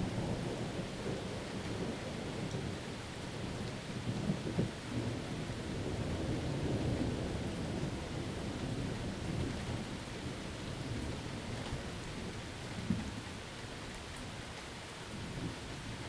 Leavenworth St, Manhattan, KS, USA - binaural front porch thunderstorm
Midnight thunderstorm in Manhattan, KS. Recording starts a little before the front hits with some rolling thunder in the distance and light rain, then louder thunder as the front hits. Thunder peaks just after the 40 minute mark and is followed by heavier rain that slowly fades out as the storm passes. Recorded in spatial audio with a Zoom H2n, edited and mixed to binaural in Reaper using Rode Soundfield.
24 June 2022